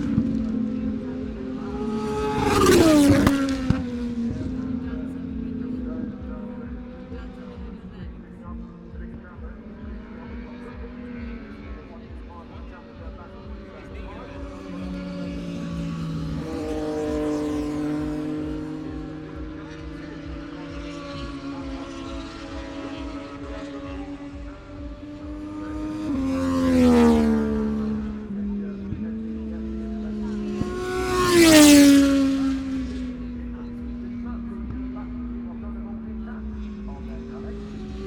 Silverstone Circuit, Towcester, UK - british motorcycle grand prix 2019 ... moto two ... fp3 ...
britsish motorcycle grand prix 2019 ... moto two ... free practice three ... maggotts ... lavalier mics clipped to bag ...